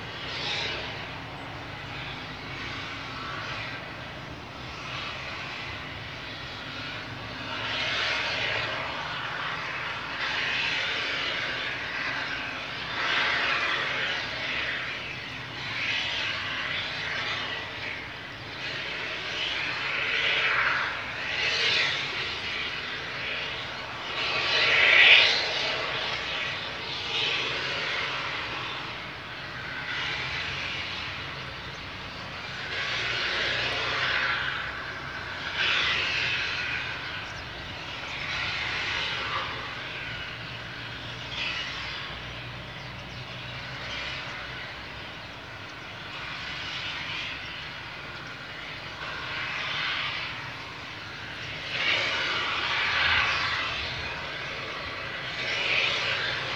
two workers power-washing and sanding a tv tower 300 meters away from the balcony. pressured hiss blows out around the district.
Poznan, Poland, 29 May 2014